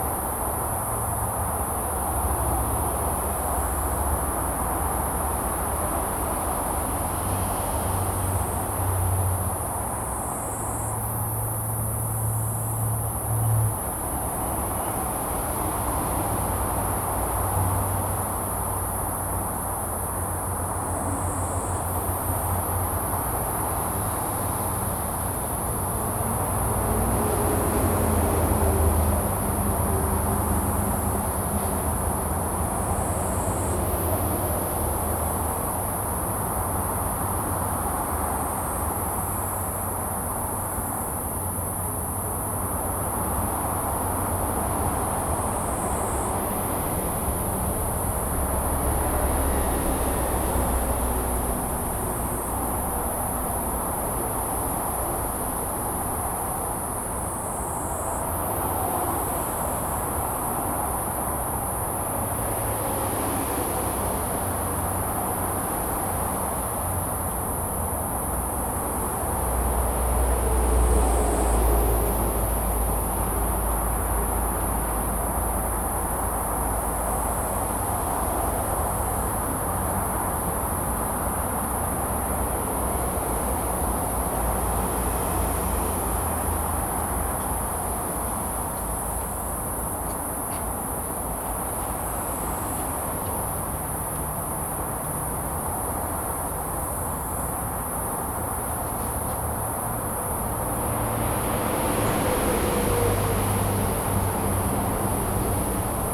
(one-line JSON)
{"title": "中山高速公路, Hukou Township - Insects and traffic sound", "date": "2017-08-12 17:13:00", "description": "Insects, Traffic sound, Next to the highway, Zoom H2n MS+XY", "latitude": "24.88", "longitude": "121.06", "altitude": "127", "timezone": "Asia/Taipei"}